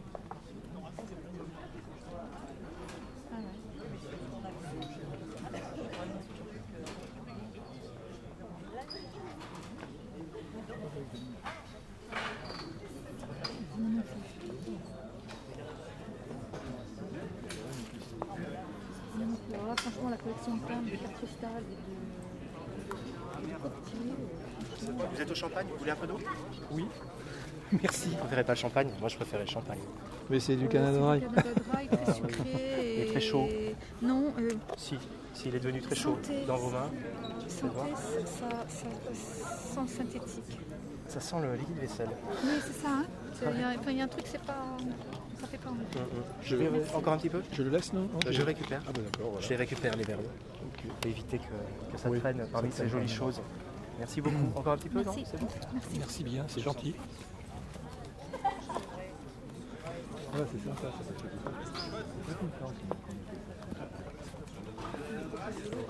villa roccabella le pradet
pendant le tournage de vieilles canailles avec claude brasseur, françois berlean, patrick chesnais